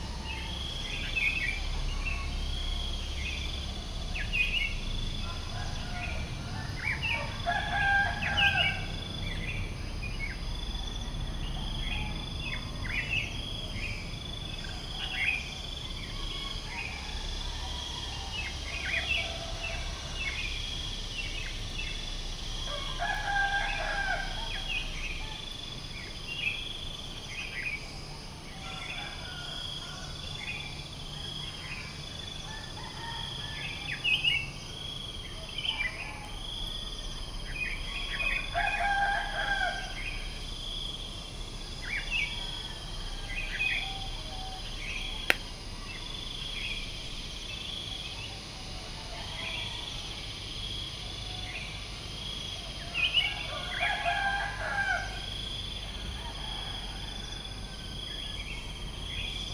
Crickets, cicadas and birds very early in the morning around the pond at Puh Annas guesthouse. A very soft atmosphere, slowly getting more lively.
Chang Wat Chiang Mai, Thailand